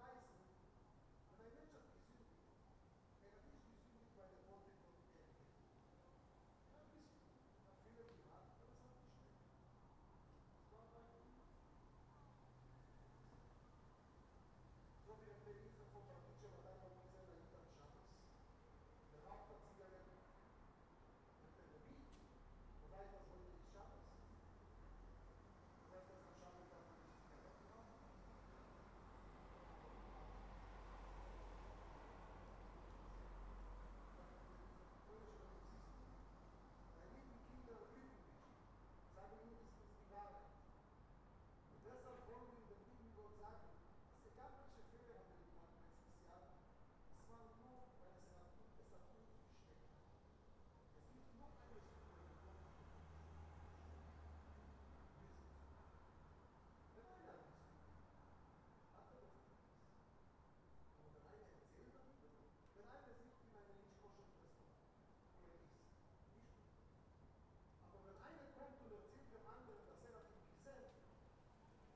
Rosch Ha-Schana, Frankfurt am Main, Deutschland - Street sounds some days before Rosch Ha-Schana
In a few days before the jewish new year some people are singing some songs after a short speech, obviously an open window, while cars are parking, motorcycles are driving down the street...
28 September 2016, ~21:00